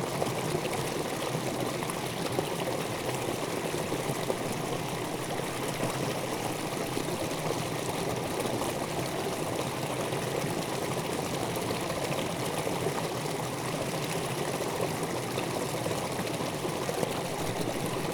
Rieselfelder, Lietzengraben, Berlin Buch, Deutschland - small weir of ditch Graben 38
water flows in dirch Graben 38 over a small weir direction Lietzengraben.
(Tascam DR-100 MK3)